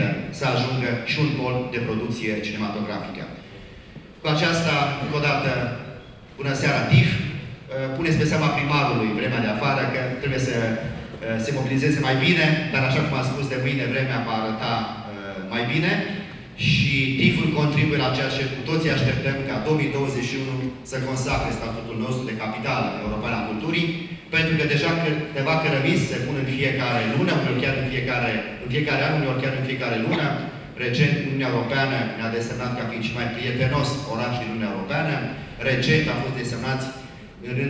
Anotherv recording at the opening event of the TIFF film festival inside the main hall of the centre cultural. The voice of the city mayor Emil Boc.
international city scapes - field recordings and social ambiences